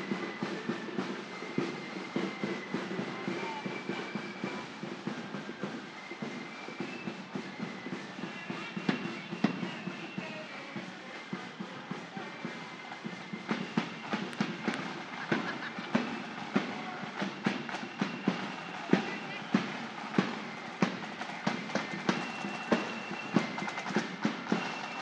College Gardens, Belfast, UK - Orange Day-Exit Strategies Summer 2021
Extended recording of the Orange Day marching bands on Lisburn Road. There are groups of people, either alone, coupled, or with their families attending the different bands marching through. On the side, some children play their own drum kits to mimic the marching bands. The road is closed off to allow safe viewing and attendance of the marches. There are instances of whistles to direct or instruct a specific marching band. It is an interesting sequence that seems never-ending since there “seems” to be an endless number of marching bands for that specific hour that they are marching through the closed road.
Northern Ireland, United Kingdom, 12 July, 11:30